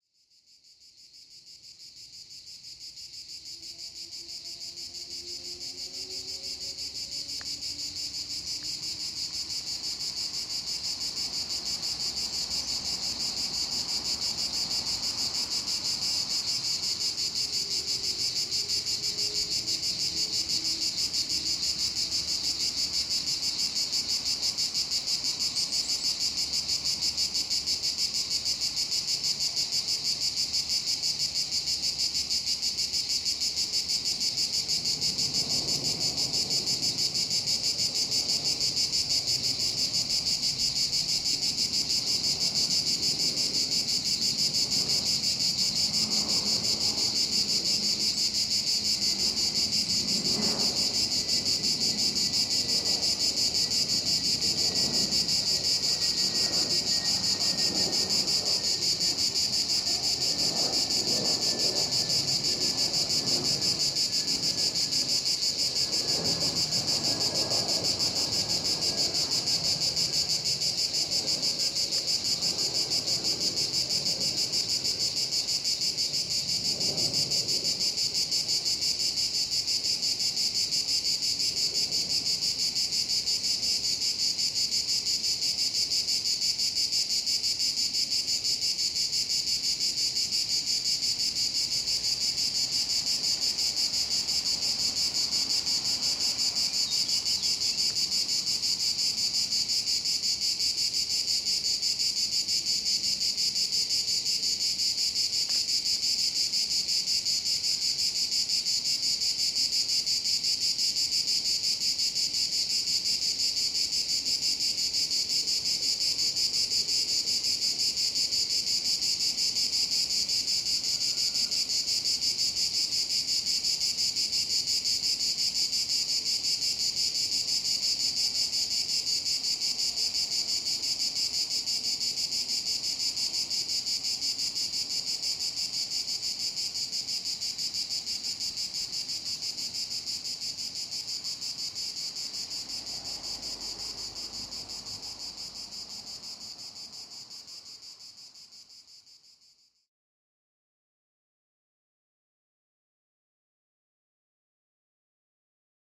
Monsanto Forest Park, Lisboa, Portugal - #WLD2016 Monsanto Soundwalk listening point 1
#WLD2016
sound notes: soundscape close and loud, doors slamming, car tires reveal the surface they are driving on, voices and shrieks of children, cicada mating calls come in loud waves, an airplane descends, a group of teenage hikers, boots stomping on fallen pine needles